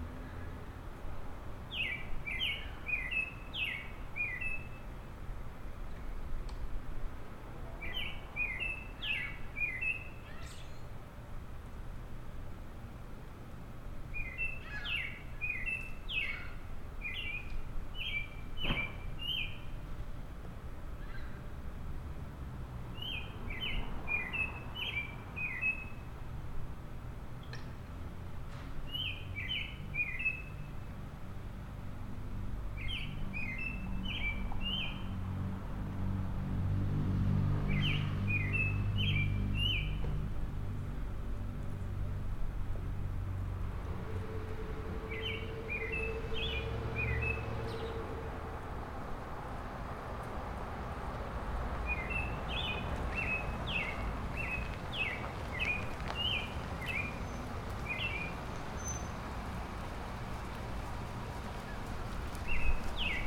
Robin singing on a quiet street.